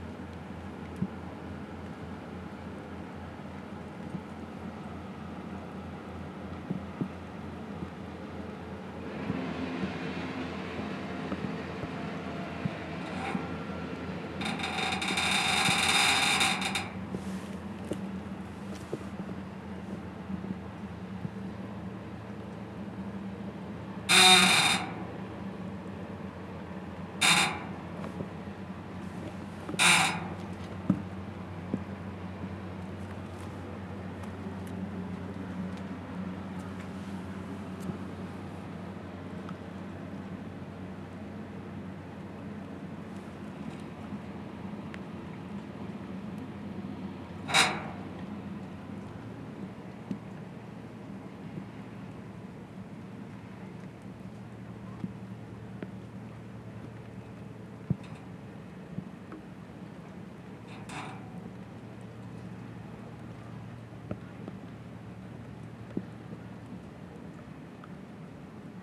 Monheim (Rhein), Deusser Haus / Marienkapelle, Monheim am Rhein, Deutschland - Monheim am Rhein - Schiffsanleger
Am Monheimer Schiffsanleger - das Geräusch der Metallplattform bewegt durch den Rhein, Schritte, Regentropfen und Stimmen von Passanten
soundmap NRW